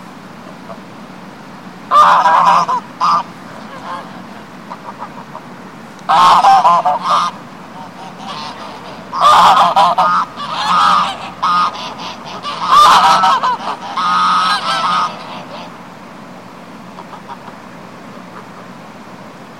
Ardfinnan, Co. Tipperary, Ireland - Swans at Ardfinnan
Swans at Ardfinnan, Tipperary